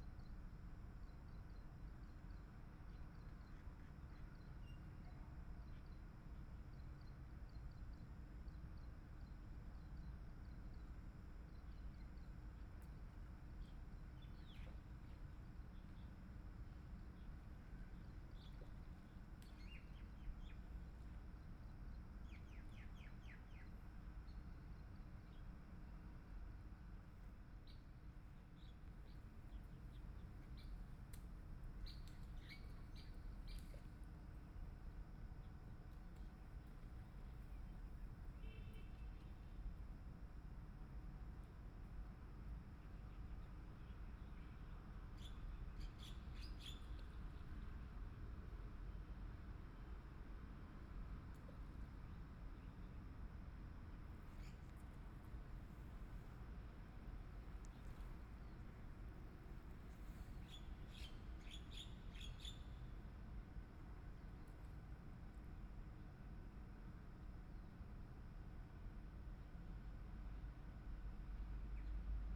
花蓮市民生里, Taiwan - Sitting by the river
Sitting by the river, Environmental sounds, Birdsong
Binaural recordings
Zoom H4n+ Soundman OKM II
Hualien County, Taiwan, 24 February 2014